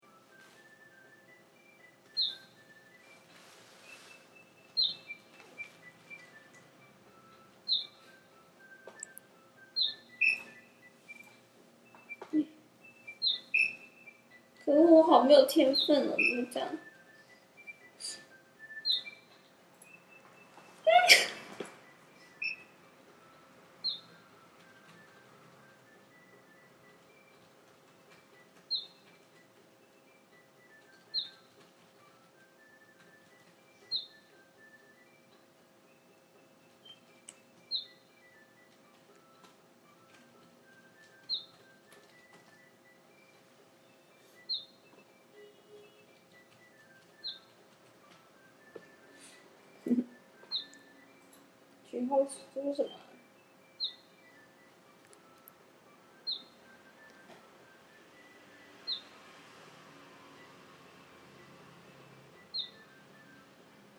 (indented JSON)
{
  "title": "106台灣台北市大安區通化街171巷9-1號 - Chang-Ru Yang playing iPad2",
  "date": "2012-10-21 00:16:00",
  "description": "Chang-Ru Yang was playing app game on iPad2",
  "latitude": "25.03",
  "longitude": "121.55",
  "altitude": "17",
  "timezone": "Asia/Taipei"
}